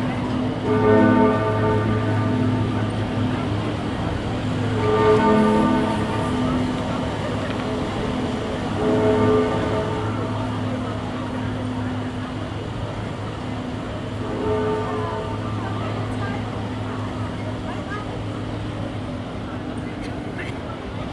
Paulus Kirche, Hamm, Germany - in front of the church walking in...
just before the concert performance of Cota Youth Choir in front of the city church, the walking in….
all tracks archived at